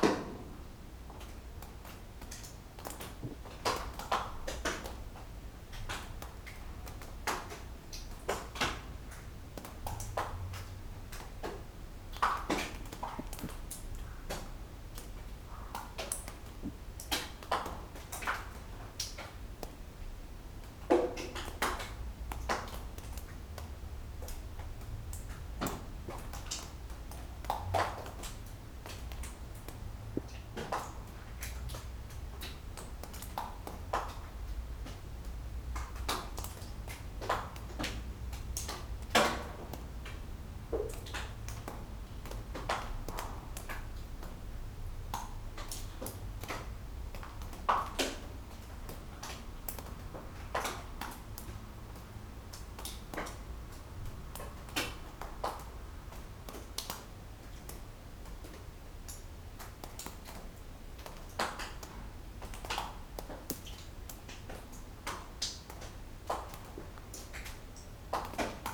Maribor, adit, drops
old unused adit at river Drava in Maribor, dripping drops